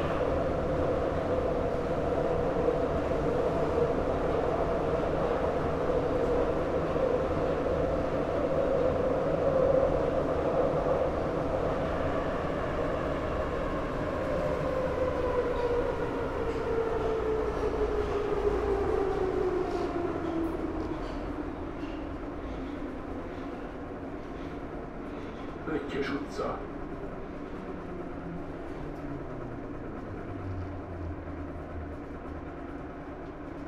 Budapest, Metro - Drive to the airport
The metro is driving into the station, entering the metro, the ride from Deak Ferenc Ter to the last station in the direction to the airport. Tascam DR-100, recorded with the build in microphone.